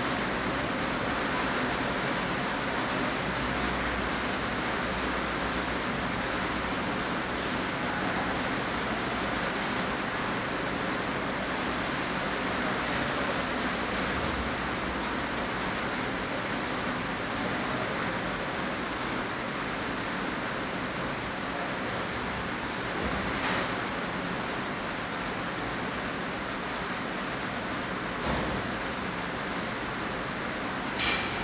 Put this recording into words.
Rain on the skylight next to Villa Arson's Internat kitchen where you can hear some people cooking. Il pleut comme les vaches qui pissent sur le fenêtre de l'Internat de Villa Arson, à côté du cuisine où les gens font leur repas.